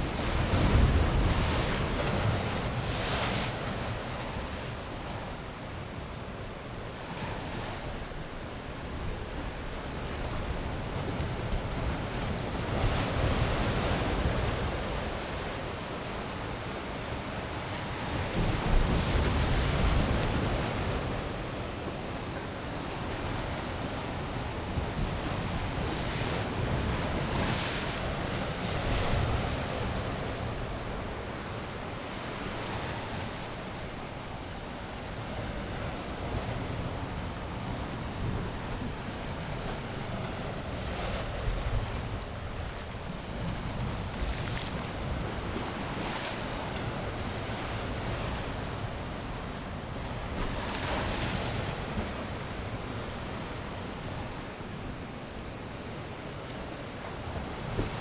Henrietta St, Whitby, UK - incoming tide ...
incoming tide ... lavalier mics on T bar on 3m fishing landing net pole over granite breakwater rocks ...
Yorkshire and the Humber, England, United Kingdom, 7 February 2020, 10:45